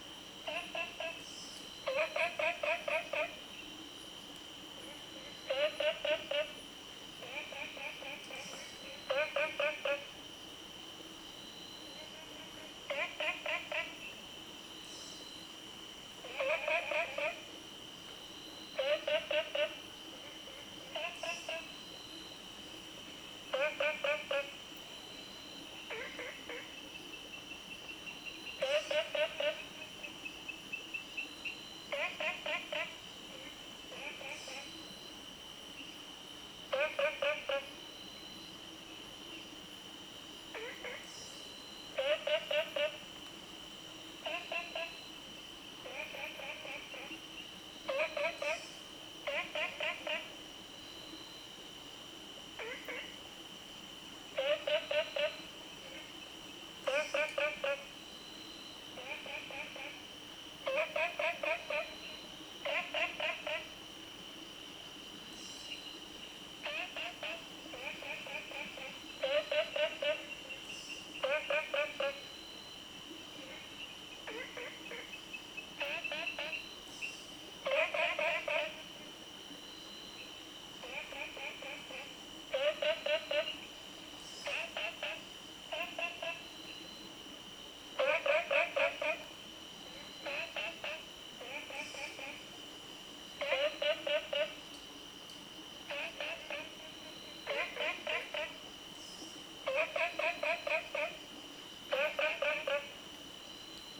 Stream, Frog Sound, On the bridge, late at night
Zoom H2n MS+XY
Nantou County, Taiwan, 14 July